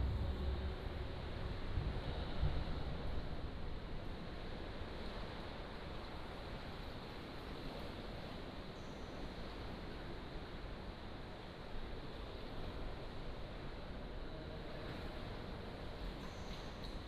北海坑道, Nangan Township - in the Readiness tunnel
walking in the Readiness tunnel, Sound of the waves, For tourists and build a small pier